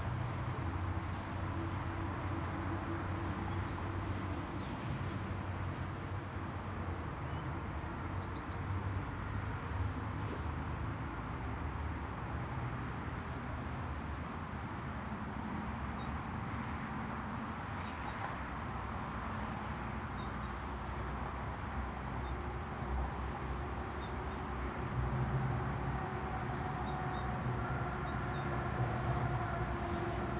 berlin wall of sound, former deathstrip, mauerweg at harbour britz-ost, 07.09.2009
Germany